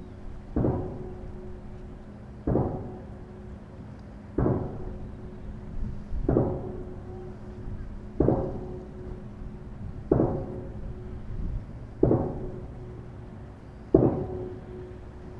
pounding from Portland
recorded from across the harbour